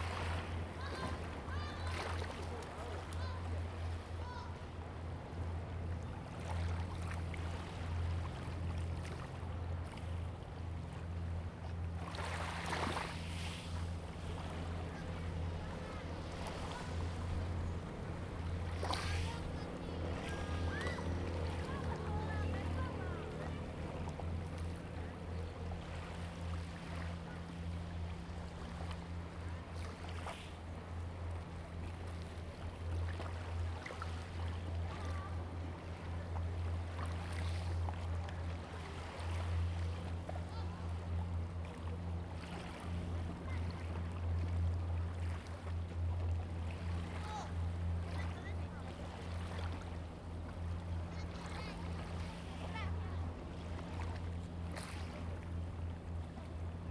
漁福漁港, Hsiao Liouciou Island - Small beach
Small fishing port, Small beach, Sound of the wave
Zoom H2n MS+XY